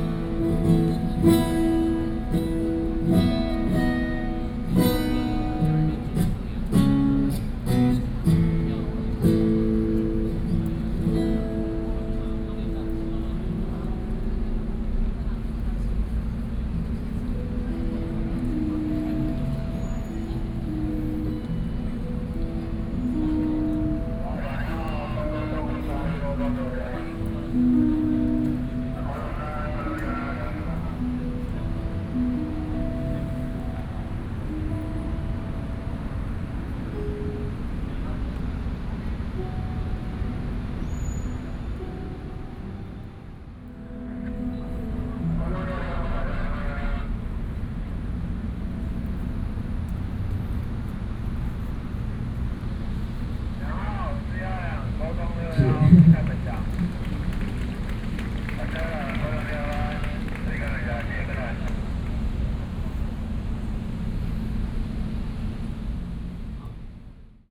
Commemorate the Tiananmen Incident., Sony PCM D50 + Soundman OKM II
台北市 (Taipei City), 中華民國, June 2012